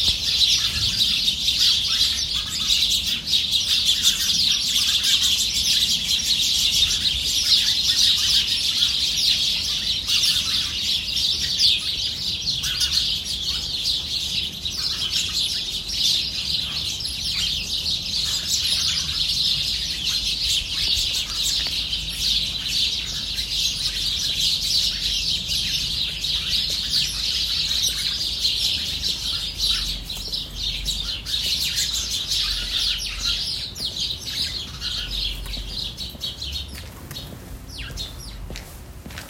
Rue Pascal Tavernier, Saint-Étienne, France - starling & cat
étourneaux (starling) dans un massif puis arrivée d'un chat. Deux promeneurs s'arrêtent et caressent le chat.
Enregistrement via Iphone SE puis normalisation avec Audacity